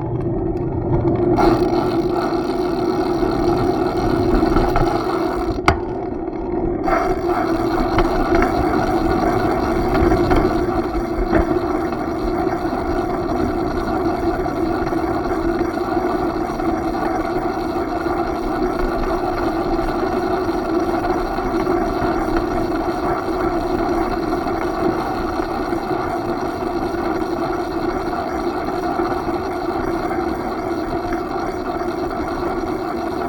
R. Jaguaré - Jaguaré, São Paulo - SP, 05344-030, Brazil - DEPi – Descida da Rua Jaguaré de bicicleta
Este áudio faz parte da oficina de representações do grupo de estudos Devaneios Experimentais e Poéticas Imaginativas (DEPI). A proposta é registrar os sons característicos do “lugar” de cada participante da atividade, refletindo sobre os sentidos explícitos e implícitos emanados por aquele local.
Parte-se do entendimento da bicicleta como lugar. Assim, coloca-se em relevo a relação pessoal com a bicicleta em sua aproximação com o corpo sensível, afinado com as vibrações e sons produzidos pela máquina em uso. Para acentuar estes rangidos, cliques, vibrações e ruídos, e evitar outros sons intensos provenientes da cidade, foi improvisado um microfone de contato afixado ao seat tube (tubo do selim). O resultado é uma representação da imagem sensorial que informa a pilotagem quase em nível subconsciente. Pertencente simultaneamente aos domínios sonoro e tátil em sua experiência direta, aqui traduz-se no domínio sonoro em sua redução enquanto representação.
Região Sudeste, Brasil, 3 September 2021, 17:20